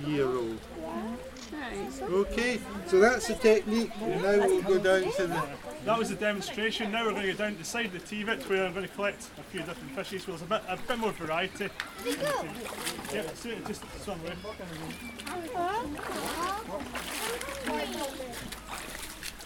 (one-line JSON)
{"title": "Hawick, Scottish Borders, UK - Electrofishing - Tweed Foundation", "date": "2013-06-16 13:20:00", "description": "Electrofishing demonstration. Tweed Foundation biologists Ron Campbell and Kenny Galt zap fish in the Dean Burn at Hawick Museum as part of a 'bioblitz' biological recording event. Electric zaps and children's excitement and chatter as the fish are netted, biologists' commentary. Zoom H4N.", "latitude": "55.42", "longitude": "-2.80", "altitude": "120", "timezone": "Europe/London"}